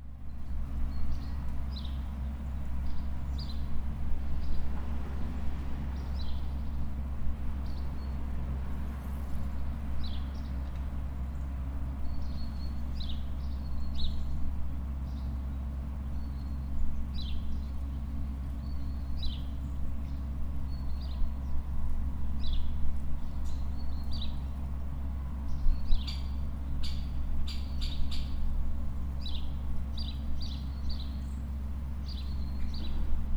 Rue des Roises, Piney, France - Parc autour du collège des Roises
Espace vert entourant le collège des Roises avec un petit court d'eau
16 January 2022, ~11:00